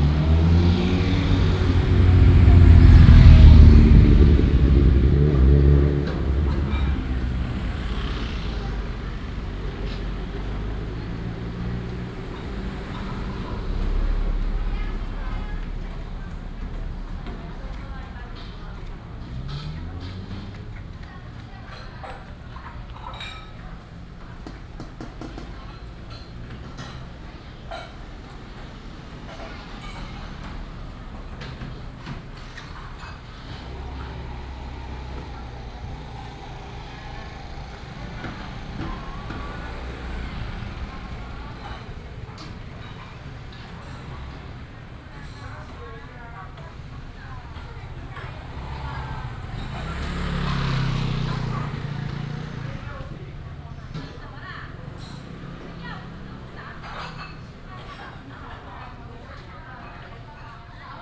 Nanxing St., Beipu Township, 新竹縣 - In the shop street
In the shop street, Tourists, The store is finishing the cleaning, Binaural recordings, Sony PCM D100+ Soundman OKM II
24 September 2017, 18:41